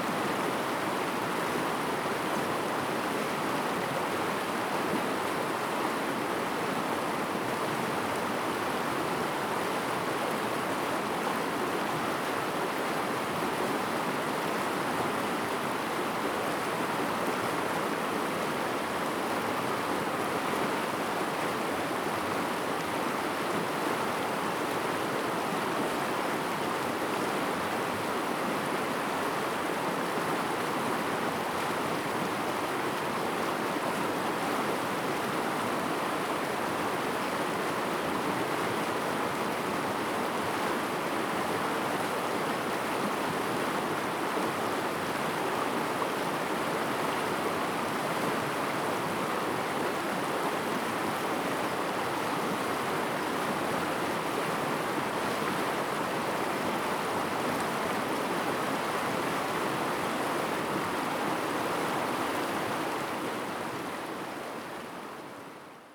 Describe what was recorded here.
stream sound, In the river bed, Zoom H2n MS+XY